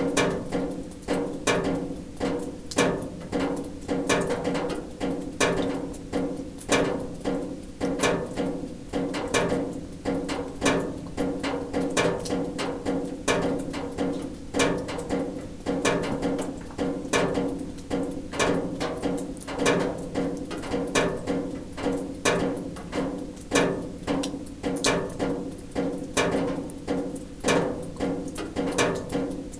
Gembloux, Belgique - Drops
In an underground mine, agressive drops falling on a sheet metal.